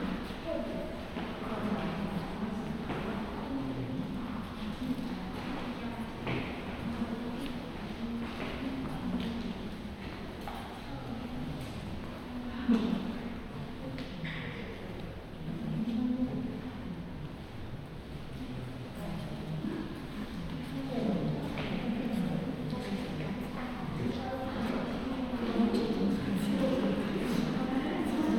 Title: 202007051434 Fukuda Art Museum, 2F Gallery
Date: 202007051434
Recorder: Zoom F1
Microphone: Luhd PM-01Binaural
Technique: Binaural Stereo
Location: Saga-Arashiyama, Kyoto, Japan
GPS: 35.013843, 135.676228
Content: binaural, stereo, japan, arashiyama, kansai, kyoto, people, museum, fukuda, art, gallery, 2020, summer, second floor